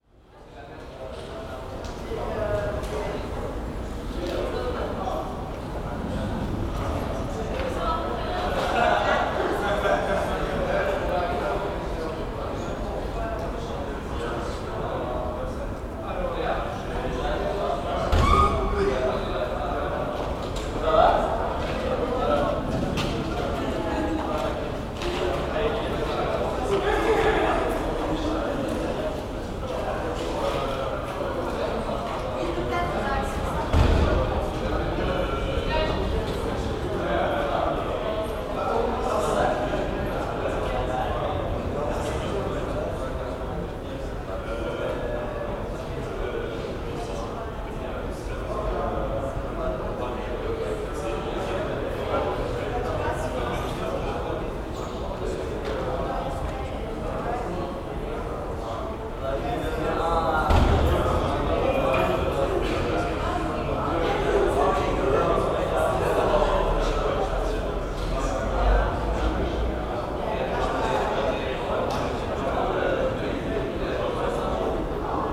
Goethe Institut students in Mitte
students in the courtyard of the Goethe Institut
Berlin, Germany